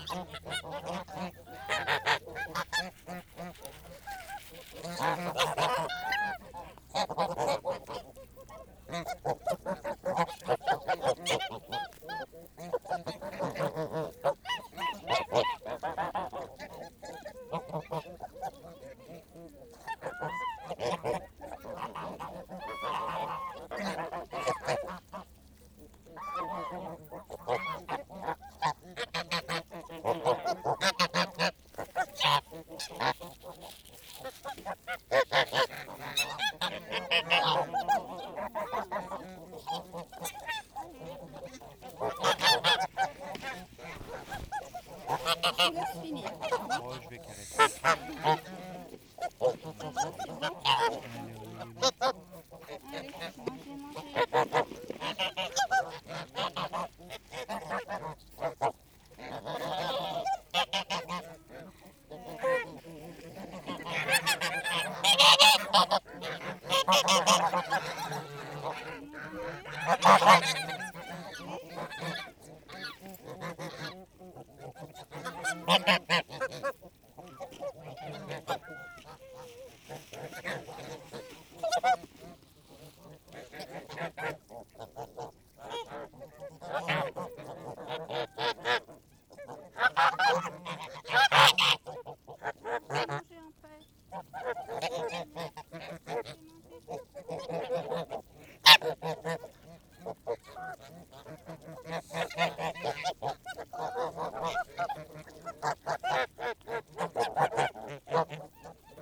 Ottignies-Louvain-la-Neuve, Belgique - Starved geese
Because of heat wave, geese are famished. Birds are herbivorous, the grass is completely yellow and burned. A lady is giving grass pellets. It makes birds becoming completely crazy. I specify that a bird is taking a dump on a microphone on 2:50 mn !
Ottignies-Louvain-la-Neuve, Belgium, August 2018